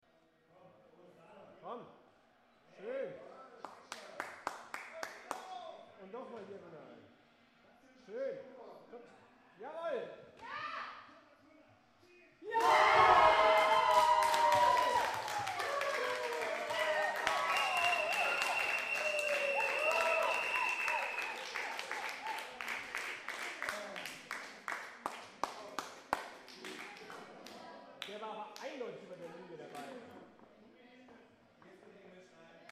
{"title": "Cologne - Goooooooaaaaaaal at the FIFA World Cup 2010", "date": "2010-06-27 17:07:00", "description": "Watching the World Cup in South Africa when Thomas Mueller scores 3:1", "latitude": "50.94", "longitude": "6.95", "altitude": "58", "timezone": "Europe/Berlin"}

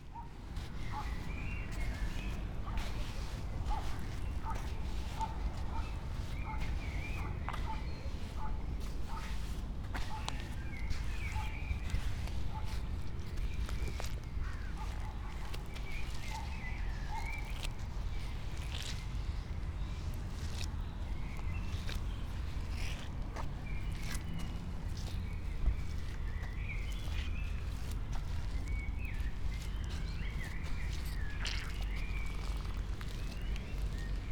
{"title": "Mariborski otok, river Drava, tiny sand bay under old trees - layers of wet sand and old leaves", "date": "2015-05-02 19:31:00", "latitude": "46.57", "longitude": "15.61", "altitude": "260", "timezone": "Europe/Ljubljana"}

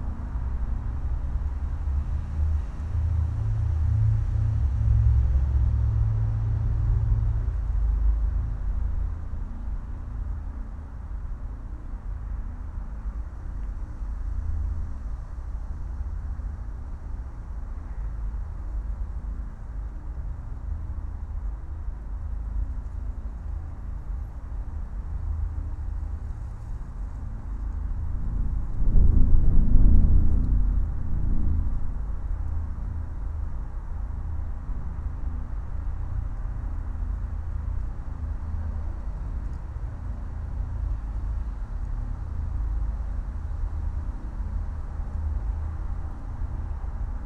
{"title": "construction site, inside pvc pipe", "date": "2010-11-21 15:00:00", "description": "urban rumour filtered through a pvc pipe found in a construction site. some nearby guards got curious and eventually kicked the recordist out of there...", "latitude": "40.39", "longitude": "-3.70", "altitude": "581", "timezone": "Europe/Madrid"}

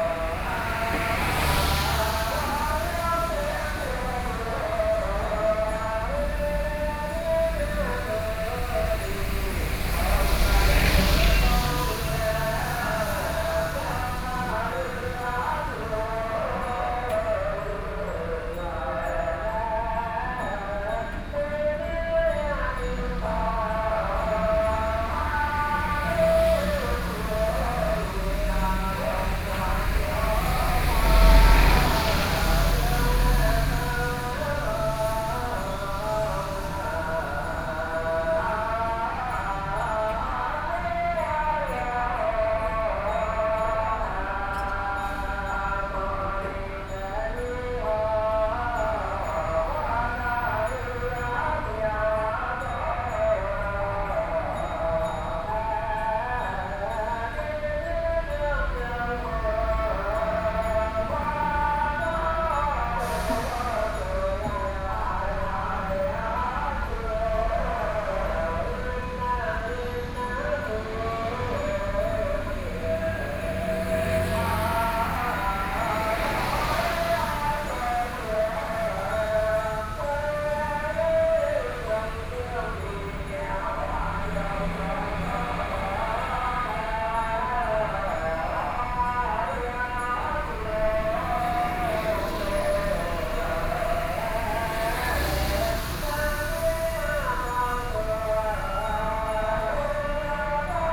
{"title": "Xinsheng N. Rd., Taipei City - ghost festival", "date": "2013-08-17 15:18:00", "description": "ghost festival, Standing on the roadside, Sound Test, Sony PCM D50 + Soundman OKM II", "latitude": "25.07", "longitude": "121.53", "altitude": "18", "timezone": "Asia/Taipei"}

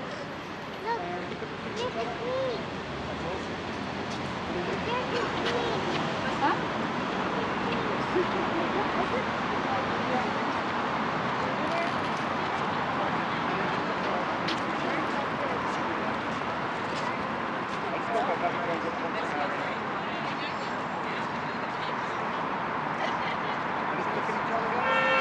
{"title": "Queens University, Belfast, UK - Queens University Belfast-Exit Strategies Summer 2021", "date": "2021-08-28 14:49:00", "description": "Recording of locals and tourists visiting the front of the Lanyon Building at Queen’s University Belfast. In the distance, there is a photographer instructing a bridge and groom for certain poses to take in front of the building. There is vehicle traffic in the background and moments of the pedestrian cross lights being activated.", "latitude": "54.58", "longitude": "-5.94", "altitude": "17", "timezone": "Europe/London"}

